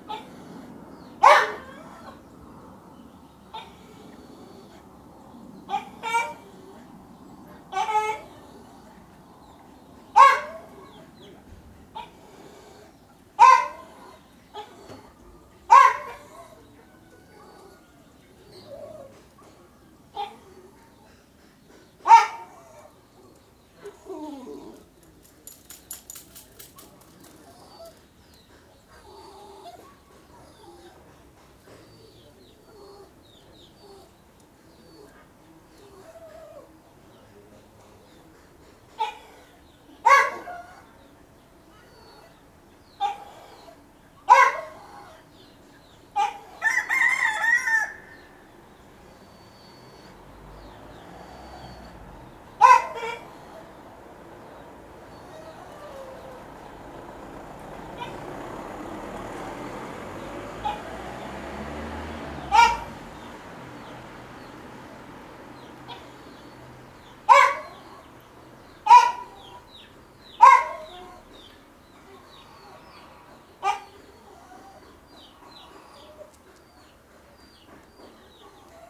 Different perspectives: II A - Torre D'arese, Italy - life in the village - II - perspective A
a hen with her kids in the grass. A small dog close by has learnt to act, behave and to bark in a similar way to all the other chickens